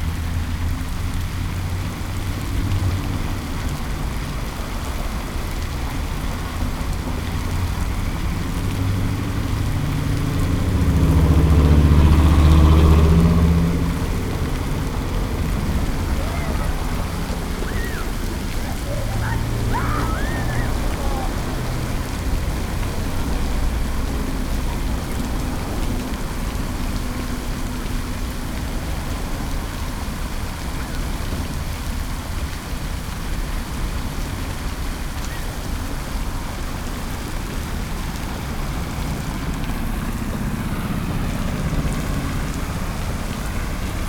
Hidalgo Park, Julián de Obregón, Blvd. Adolfo López Mateos, Industrial, León, Gto., Mexico - Hidalgo Park Fountain
It's a fountain that has a base of about ten meters long by four. There were some people passing by and some cars and buses by the big boulevard where it's located.
I recorded this on Saturday on February 15th, 2020 at 13:57.
I was in front of the fountain for a while, I turned around on my bicycle and stay in front of the fountain a little more time.
I used a Tascam DR-05X with its own microphones and a Tascam WS-11 windshield.
Original Recording:
Type: Stereo
Es una fuente que tiene una base de unos diez metros de largo por cuatro. Había algunas personas que pasaban y algunos automóviles y autobuses por el gran bulevar donde se encuentra.
Grabé esto el sábado 15 de febrero de 2020 a las 13:57.
Estuve frente a la fuente por un tiempo, di la vuelta en mi bicicleta y me quedé un poco más delante de la fuente.
Usé un Tascam DR-05X con sus propios micrófonos y un parabrisas Tascam WS-11.